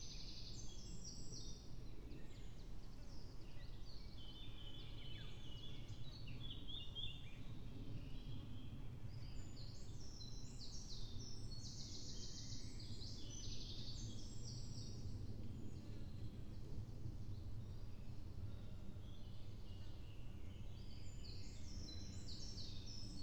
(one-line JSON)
{"title": "Globocek, Ribniško selo, Maribor - pond ambience", "date": "2021-06-23 08:13:00", "description": "08:13 Globocek, Ribniško selo, Maribor\n(remote microphone: AOM5024HDR/ IQAudio/ RasPi Zero/ 3G modem", "latitude": "46.58", "longitude": "15.65", "altitude": "331", "timezone": "Europe/Ljubljana"}